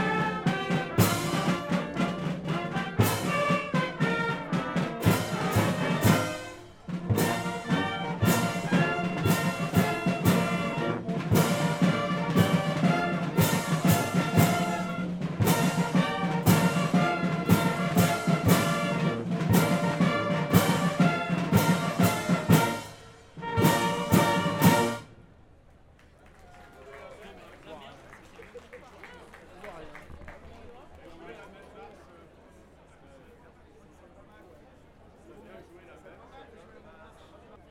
{
  "title": "Pl. Jean Jaurès, Saint-Étienne, France - Procession Ste-Barbe - 2018",
  "date": "2018-12-01 20:00:00",
  "description": "St-Etienne - de la cathédrale St-Charles Borromée au Musée de la mine - Procession de la Ste-Barbe\nZOOM H6",
  "latitude": "45.44",
  "longitude": "4.39",
  "altitude": "520",
  "timezone": "Europe/Paris"
}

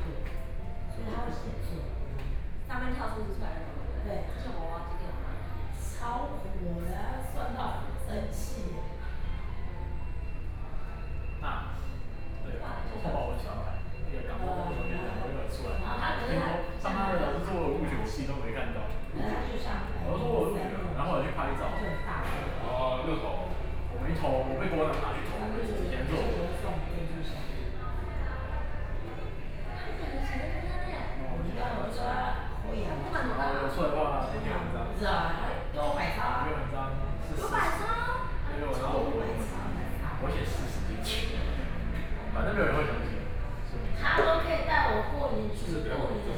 {
  "title": "KFC, Taoyuan City - KFC",
  "date": "2013-09-11 11:53:00",
  "description": "Young voice conversation, Sony PCM D50 + Soundman OKM II",
  "latitude": "24.99",
  "longitude": "121.31",
  "altitude": "117",
  "timezone": "Asia/Taipei"
}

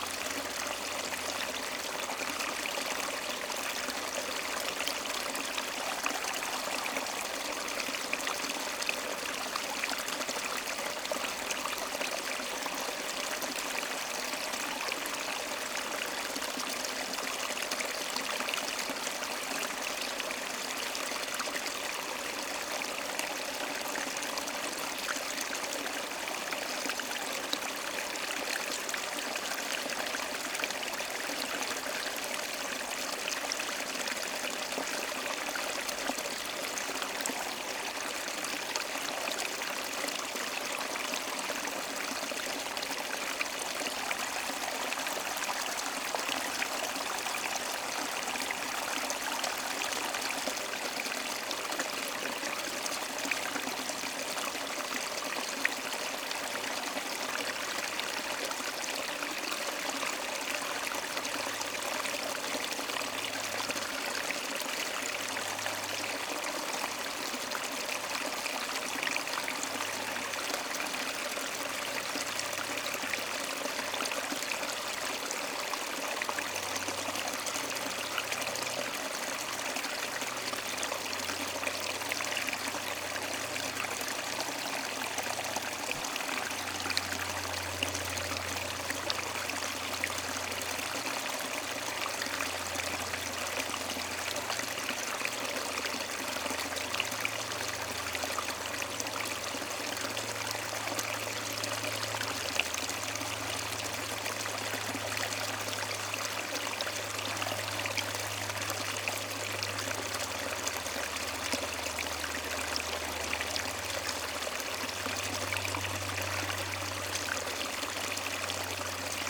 {
  "title": "Genappe, Belgique - Ry d'Hez river",
  "date": "2017-04-09 14:50:00",
  "description": "The Ry d'Hez river, flowing in a big wood jam.",
  "latitude": "50.59",
  "longitude": "4.49",
  "altitude": "111",
  "timezone": "Europe/Brussels"
}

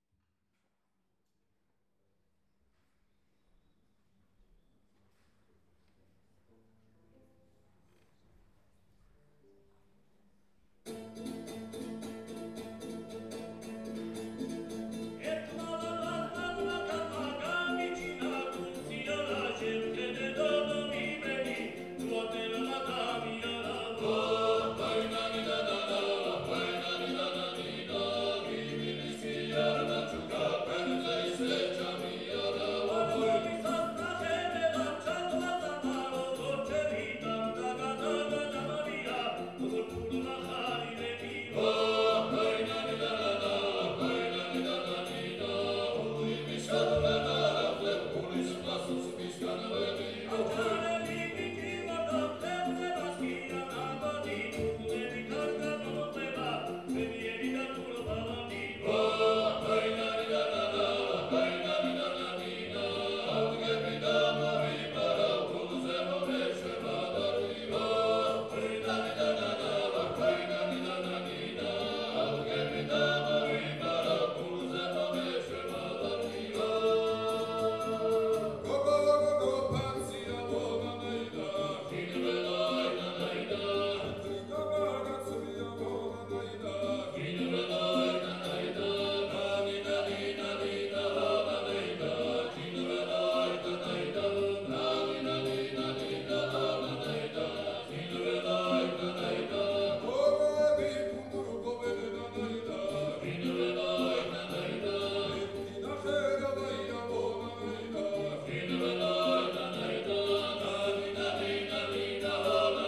{
  "title": "Bistrampolis, Lithuania, Chveneburebi",
  "date": "2015-08-09 18:25:00",
  "description": "Gergian vocal ensemble Chveneburebi",
  "latitude": "55.60",
  "longitude": "24.36",
  "altitude": "67",
  "timezone": "Europe/Vilnius"
}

